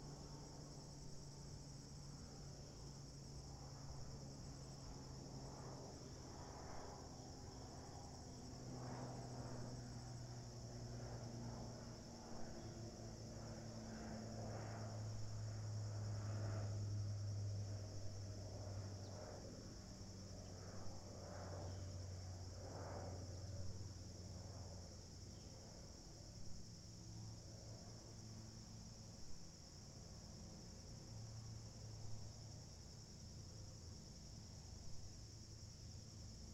The College of New Jersey, Pennington Road, Ewing Township, NJ, USA - Lake Ceva
Lake Ceva at The College of New Jersey